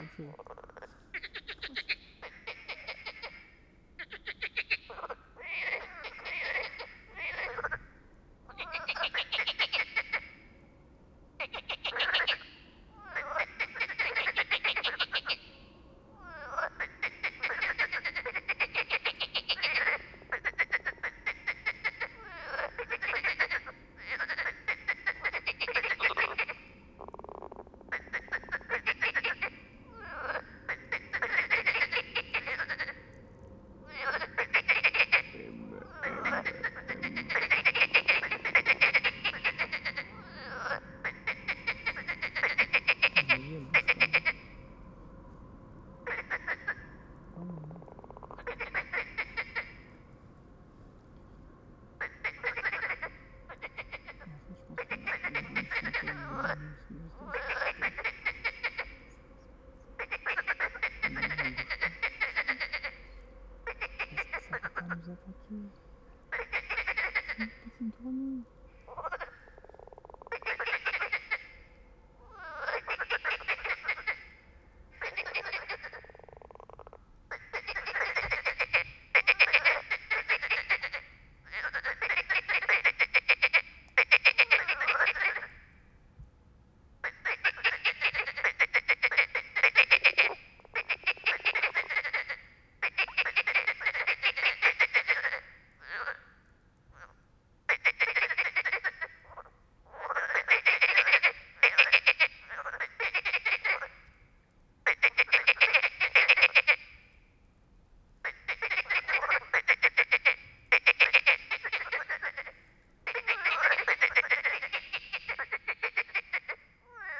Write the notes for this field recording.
Nous avions établis le camp à coté d'un étang peuplé de grenouilles qui se sont mise à chanter toute la nuit. J'ai essayé de voir si elles me répondaient mais il semblerais qu'elles n'ai rien à faire des mes interférences acoustiques... Fun Fact: Elles se mettent cependant à chanter lorsqu'un train de marchandises passe au loin. A little pond next to the river Loire where we tried to sleep untill a bunch of frogs begin to sing. I was trying to induce them to sing, but they seemingly dont care... Fun fact: they begin to sing when freights trains passes, /Oktava mk012 ORTF & SD mixpre & Zoom h4n